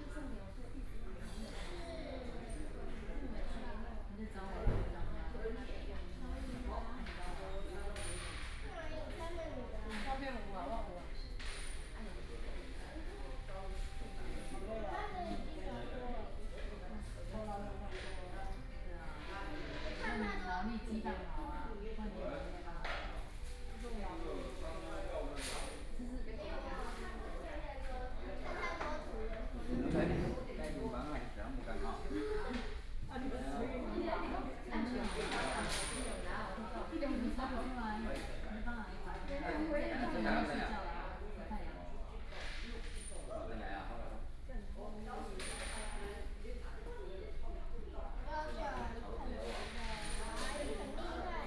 KFC, Jungli City - Chat
In the fast-food chicken restaurant, Zoom H4n + Soundman OKM II